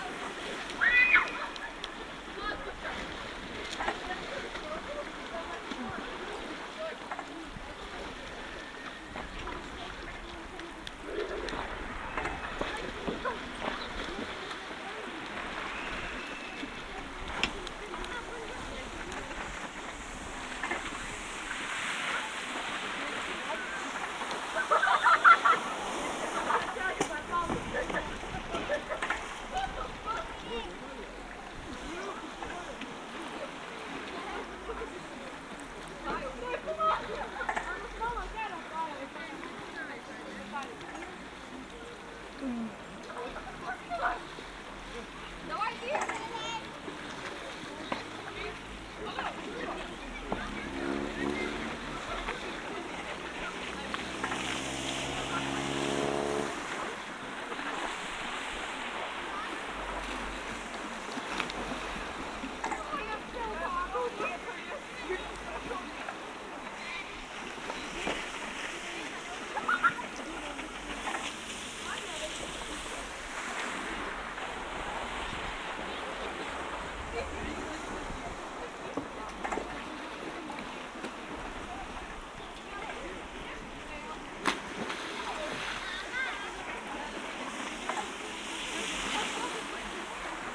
{
  "title": "Zarasai, Lithuania, on a beach",
  "date": "2021-06-17 20:00:00",
  "description": "evening on a beach in Zarasas lake. sennheiser ambeo smart headset recording",
  "latitude": "55.74",
  "longitude": "26.23",
  "altitude": "127",
  "timezone": "Europe/Vilnius"
}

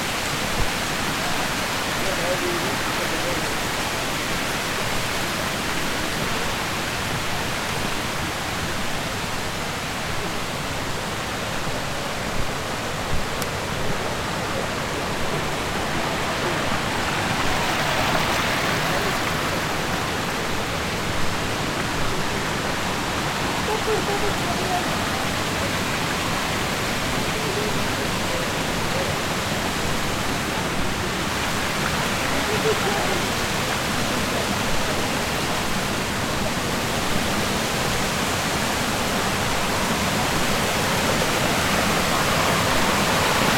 {
  "title": "Plitvički Ljeskovac, Croatie - Plitvice lakes",
  "date": "2019-07-20 11:31:00",
  "description": "Water falls, Plitvice lakes, Croatia, Zoom H6",
  "latitude": "44.87",
  "longitude": "15.60",
  "timezone": "GMT+1"
}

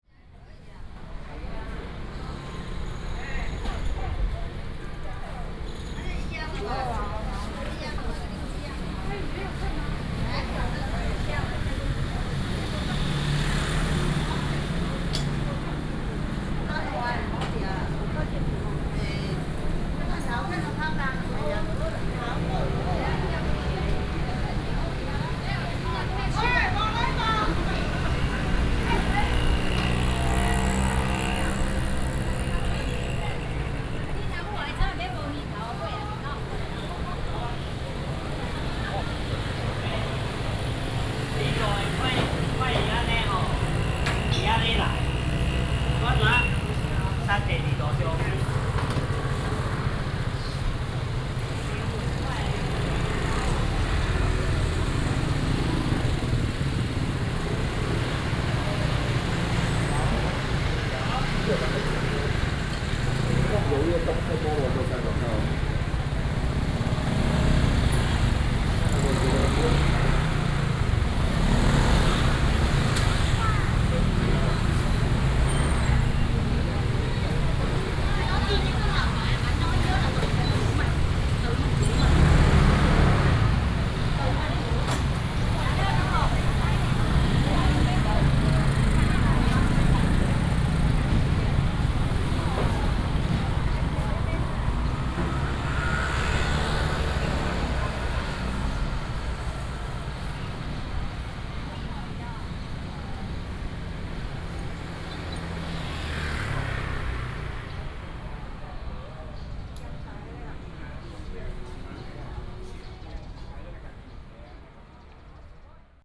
{
  "title": "Yanping St., East Dist., Chiayi City - Walking in the old alley",
  "date": "2017-04-18 09:59:00",
  "description": "Walking through the traditional market, Traffic sound, Walking in the old alley",
  "latitude": "23.48",
  "longitude": "120.46",
  "altitude": "40",
  "timezone": "Asia/Taipei"
}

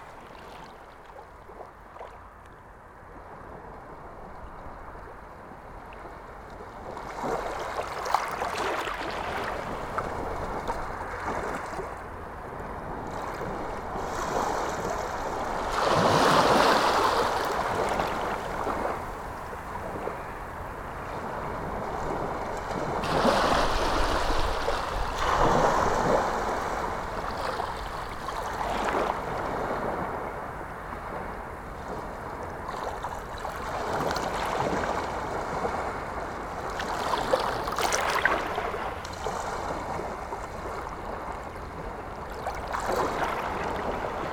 {
  "title": "Blankenberge, Belgique - The sea",
  "date": "2018-11-15 16:30:00",
  "description": "Recording of the sea near a jetty. A medical helicopter is passing.",
  "latitude": "51.31",
  "longitude": "3.11",
  "altitude": "3",
  "timezone": "Europe/Brussels"
}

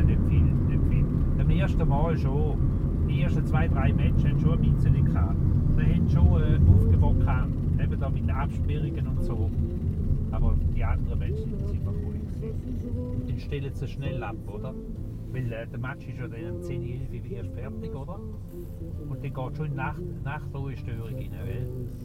{
  "title": "St. Gallen (CH), taxi",
  "description": "taxi driver commenting his sunday work and the atmosphere of soccer fans in his city. recorded june 15, 2008. - project: \"hasenbrot - a private sound diary\"",
  "latitude": "47.42",
  "longitude": "9.37",
  "altitude": "678",
  "timezone": "GMT+1"
}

